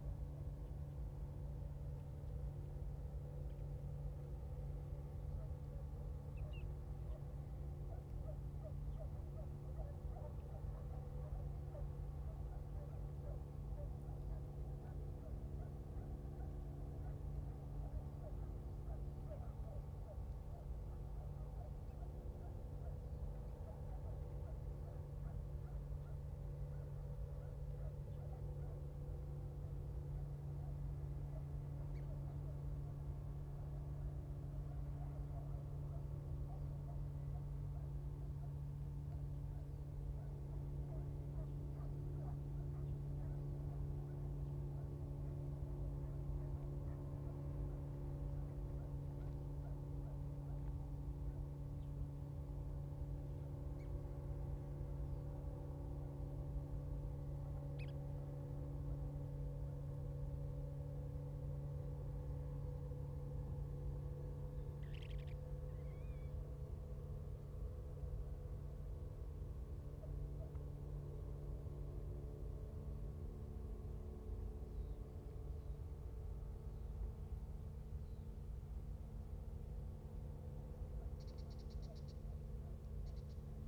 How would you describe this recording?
In the bank, Dogs barking, The distant sound of fishing vessels, Birds singing, Zoom H2n MS +XY